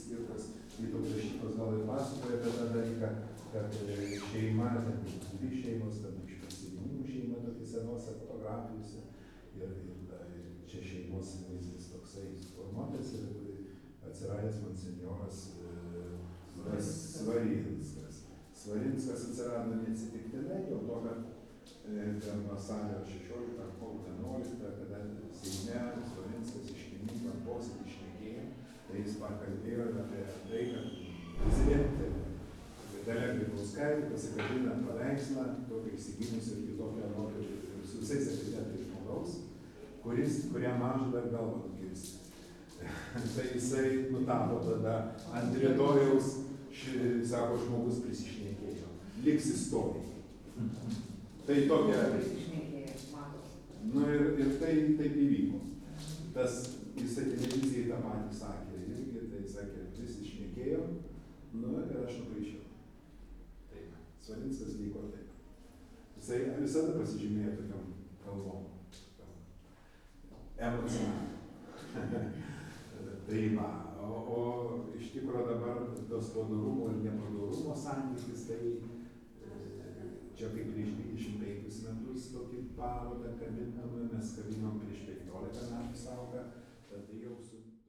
Lithuania, Dusetos, in the art gallery
A. Stauskas speaks about artist S. Sauka